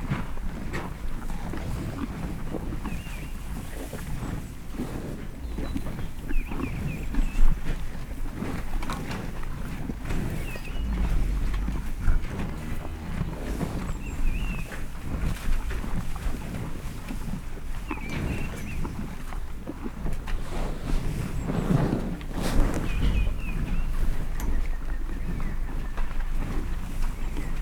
Cowshed, Bredenbury, Herefordshire, UK - Hereford Cattle Eating
About a dozen Hereford cattle continuously munch on hay bales. They are in an open sided cattle shed and I have placed the mics on top of the bales. While I stand about a yard away they stare at me fixedly all the time they are ating.
31 March, 10:30